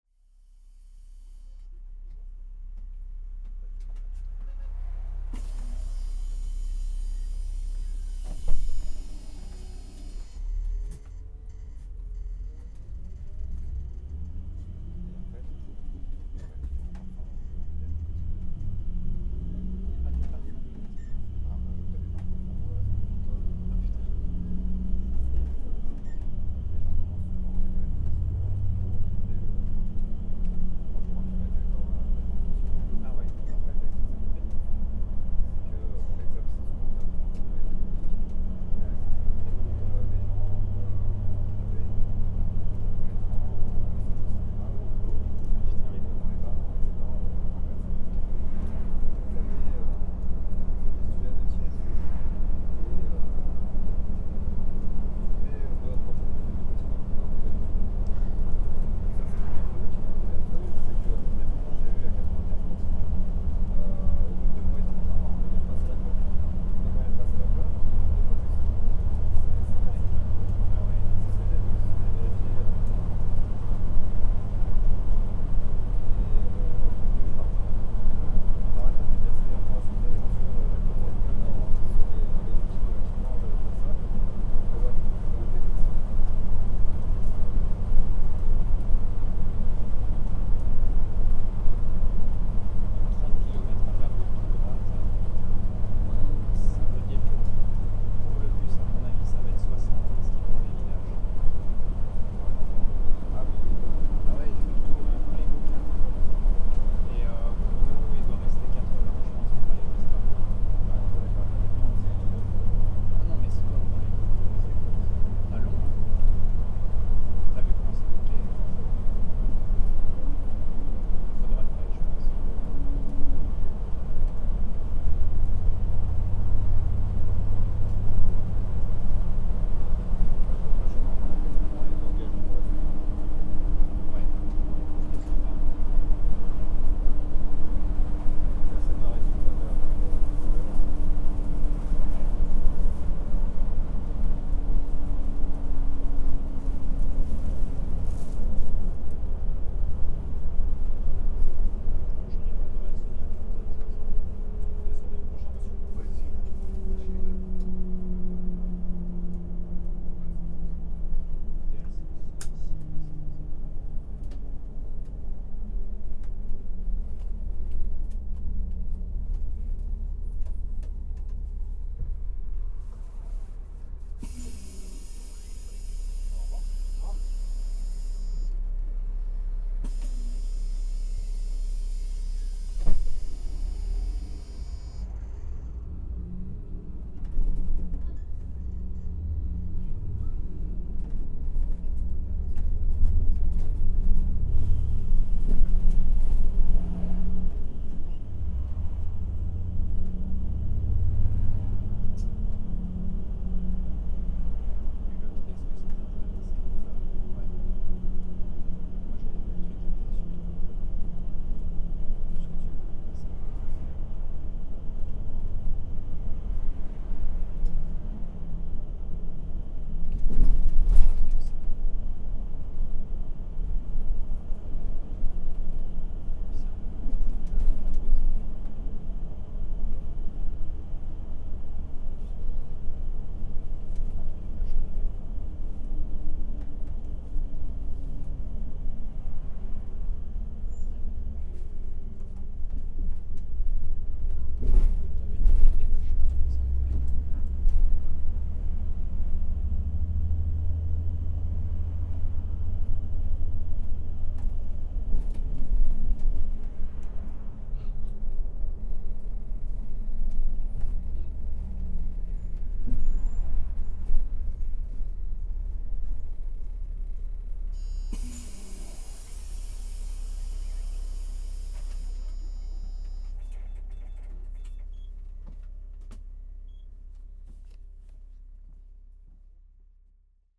Le Trait, France - Bus transportation
A bus transportation from Caudebec to Le Trait.